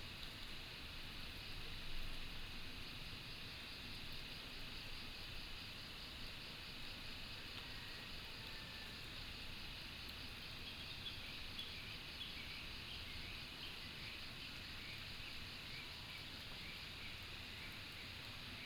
茅埔坑溪生態公園, Nantou County - Early morning
Early morning, Crowing sounds, Bird calls
Nantou County, Puli Township, 桃米巷11-3號